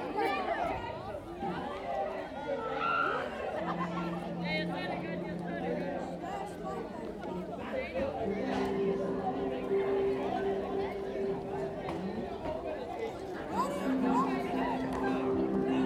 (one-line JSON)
{"title": "Kirkegade, Struer, Denmark - Excited teenagers and music sculptures in the main square", "date": "2022-09-29 19:07:00", "description": "Teenagers being teenagers. Sometimes playing the tuning fork sculptures, which are a sound installation in the big square.", "latitude": "56.49", "longitude": "8.59", "altitude": "4", "timezone": "Europe/Copenhagen"}